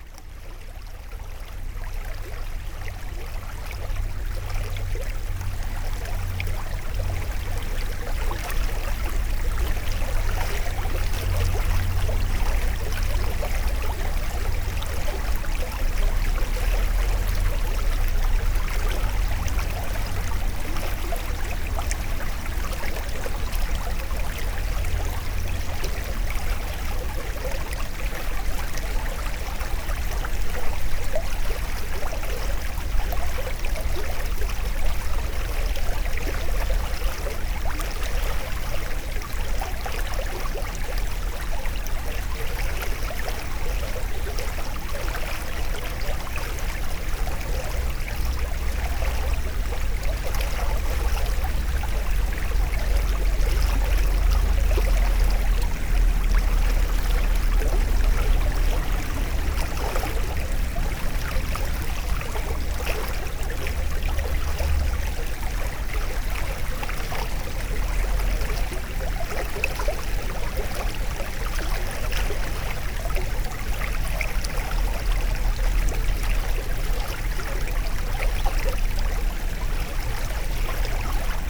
A small river flowing, called the Gambon.
Les Andelys, France, 21 September 2016